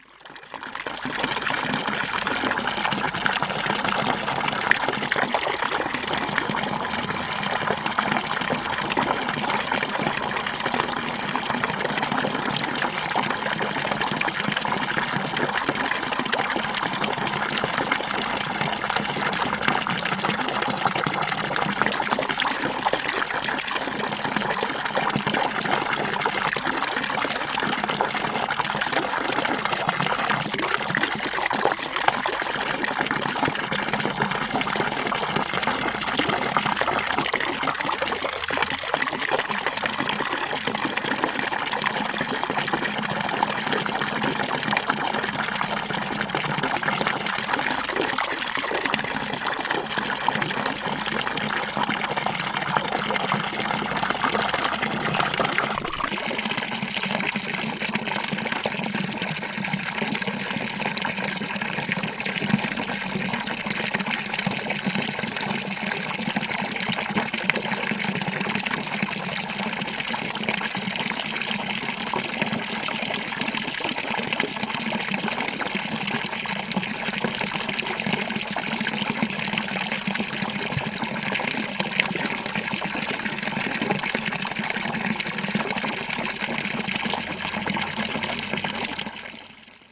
Bassin fontaine, plus de débit en ce début d'automne.